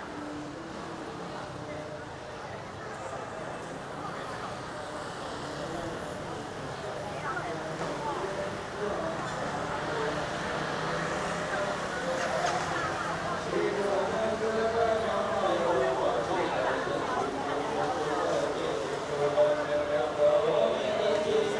No., Dongmen Street, East District, Hsinchu City, Taiwan - Ghost Month at Dong Ning Temple
Singing and music as heard from a distance, while walking around the south-east corner of Dong Ning Temple. A crowded place on the third day of Ghost Month. Stereo mics (Audiotalaia-Primo ECM 172), recorded via Olympus LS-10.